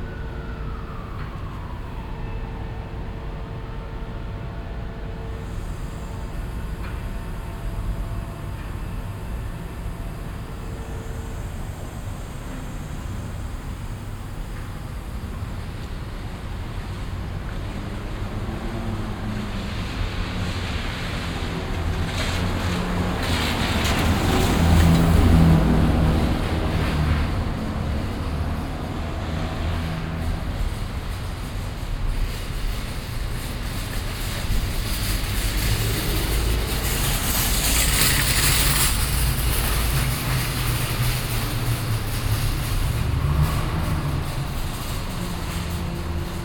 vancouver, columbia street, small alley
homeless people with shopping carts and pigeons in a small alley in the early afternoon
soundmap international
social ambiences/ listen to the people - in & outdoor nearfield recordings